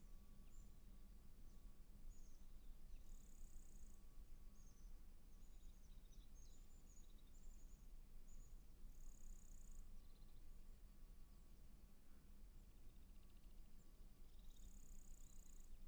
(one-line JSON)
{"title": "Črnotiče, Črni Kal, Slovenia - Cargo train", "date": "2020-07-10 08:25:00", "latitude": "45.55", "longitude": "13.89", "altitude": "392", "timezone": "Europe/Ljubljana"}